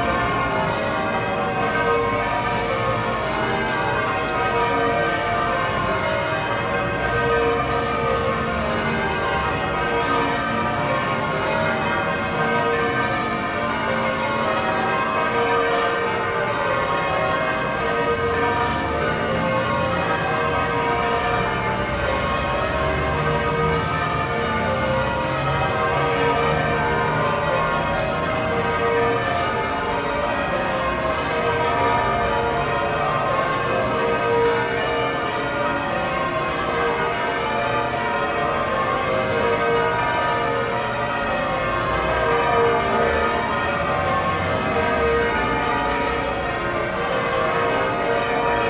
St Paul's Cathedral - London, UK - St Paul's Bells